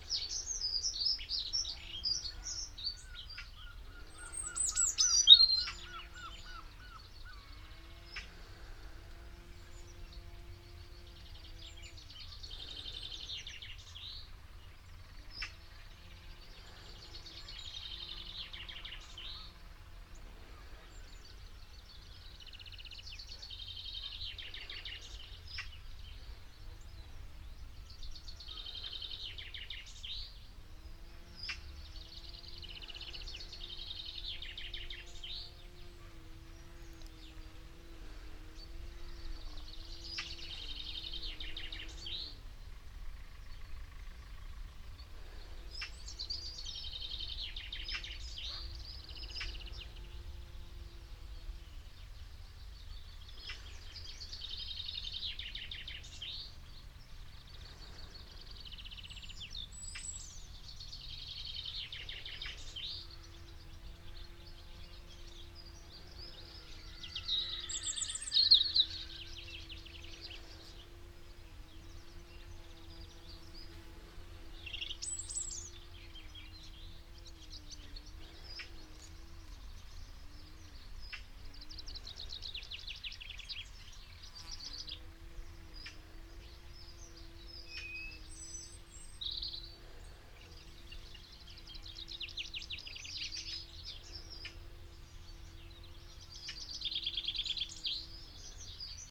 Sunday morning stroll
Sunday morning, spring, birds, Barr Lane, Chickerell
Chickerell, Dorset, UK, 10 April 2011, ~14:00